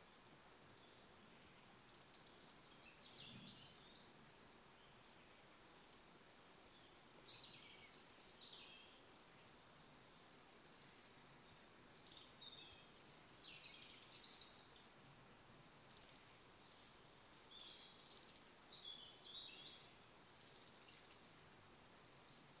Birds in the valley
Birds singing in the valley of Aiguebrun.
France, 24 September 2011, 16:00